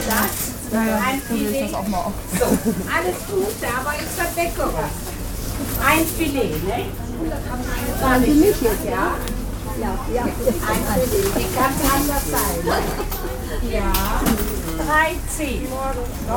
{"title": "Cologne, Sudermanplatz, Deutschland - Market", "date": "2013-10-18 11:13:00", "description": "At the market stand for fowl. Conversations between the market-woman and the clients, the sound of coins, women exchanging recipes how to prepare maize-fed chicken.", "latitude": "50.95", "longitude": "6.95", "altitude": "56", "timezone": "Europe/Berlin"}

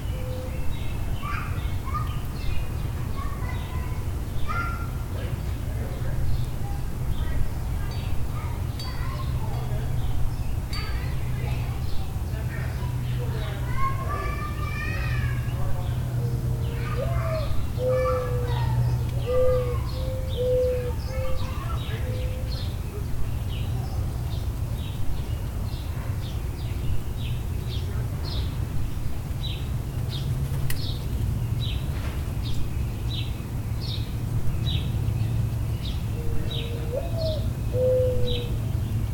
{"title": "Queensdale Ave, East York, ON, Canada - Spring Oudoor Sounds", "date": "2022-05-05 16:22:00", "description": "General suburban sounds in the late afternoon of a mid-Spring day. Calls of mourning doves, sparrows, and robins; sounds of passing air and ground vehicles, emergency sirens, people talking, a few brief dings from a wind chime, and the music of an ice cream truck. Zoom H4n using built-in mics and placed on an upside-down flower pot.", "latitude": "43.69", "longitude": "-79.33", "altitude": "119", "timezone": "America/Toronto"}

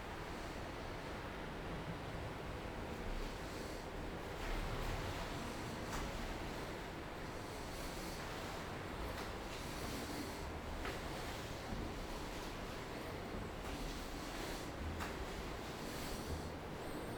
{"title": "Carrer Mendez Nuñez, Portbou, Girona, Spagna - Port Bou walking night", "date": "2017-09-27 23:54:00", "description": "Walk over night on the trace of Walter Benjamin: start at Port Bou City Library at 11:54 of Wednedsay September 27 2017; up to Memorial Walter Benjamin of Dani Karavan, enter the staircases of the Memorial, stop sited on external iron cube of Memorial, in front of sea and cemetery, back to village.", "latitude": "42.43", "longitude": "3.16", "altitude": "4", "timezone": "Europe/Madrid"}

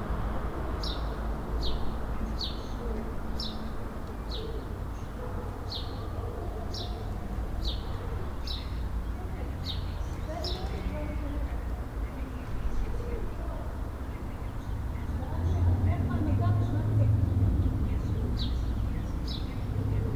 {"title": "Ein Tag an meinem Fenster - 2020-04-03", "date": "2020-04-03 14:32:00", "latitude": "48.61", "longitude": "9.84", "altitude": "467", "timezone": "Europe/Berlin"}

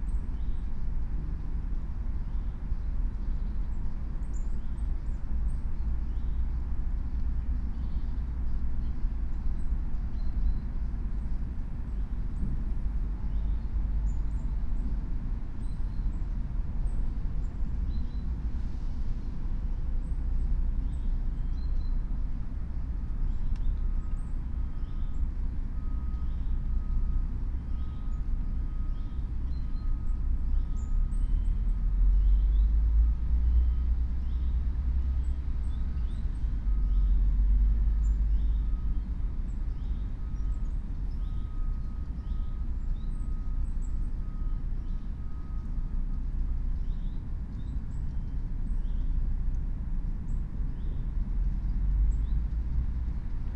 {"title": "Berlin, Alt-Friedrichsfelde, Dreiecksee - train junction, pond ambience", "date": "2021-08-30 08:00:00", "description": "08:00 Berlin, ALt-Friedrichsfelde, Dreiecksee - train triangle, pond ambience", "latitude": "52.51", "longitude": "13.54", "altitude": "45", "timezone": "Europe/Berlin"}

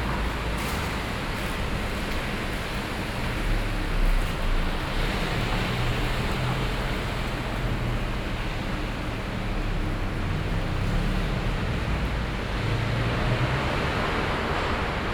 {"title": "Schwäbisch Gmünd, Deutschland - Parking garage of a shopping center", "date": "2014-05-12 15:00:00", "description": "The parking garage of the shopping center \"City Center\" in the early afternoon.", "latitude": "48.80", "longitude": "9.80", "altitude": "324", "timezone": "Europe/Berlin"}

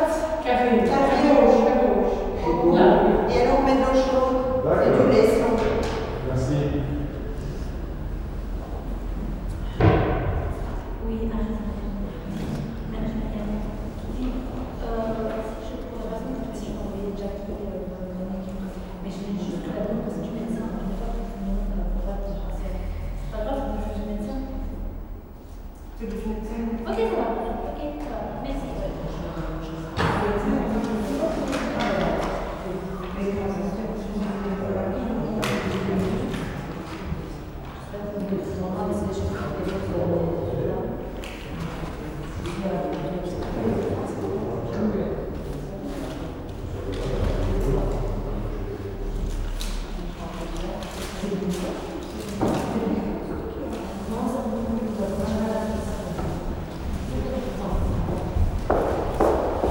{"title": "Brussels, Chaussée dAlsemberg, laboratory waiting room", "date": "2012-02-04 11:45:00", "description": "Some inner field recordings as its still freezing outside :)\nthere is a nice reverb in this laboratory waiting room.\nPCM-M10, internal microphones.", "latitude": "50.82", "longitude": "4.34", "altitude": "93", "timezone": "Europe/Brussels"}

